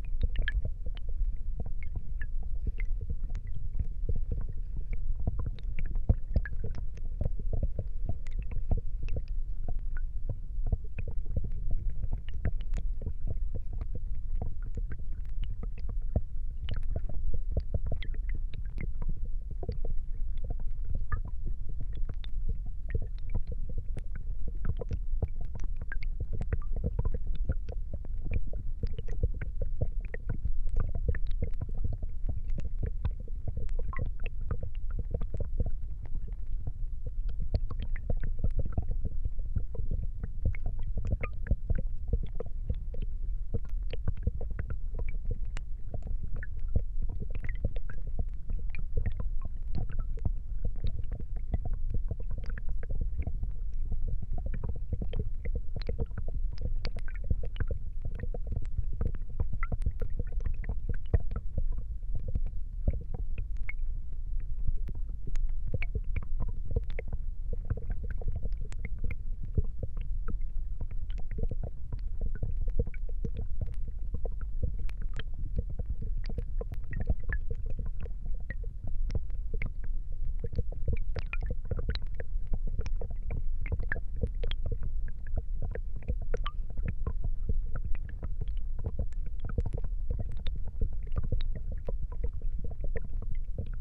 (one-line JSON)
{"title": "Vyžuonos, Lithuania, on ice and under", "date": "2019-03-03 16:10:00", "description": "little, half frozen dtreamlet covered with tiny ice. the first half of the recording is made with two omni mics and the second half is made with two contact mics on ice and hydrophone in the streamlet", "latitude": "55.57", "longitude": "25.50", "altitude": "94", "timezone": "Europe/Vilnius"}